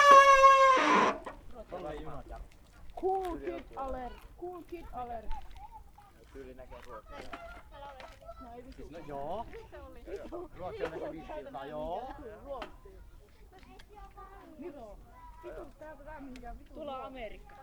Nallikarin majakka, Oulu, Finland - Ambiance near the lighthouse of Nallikari on a warm summer day
People hanging around, cycling and skating around the lighthouse of Nallikari on the first proper summer weekend of 2020. Zoom H5 with default X/Y module.
24 May, ~17:00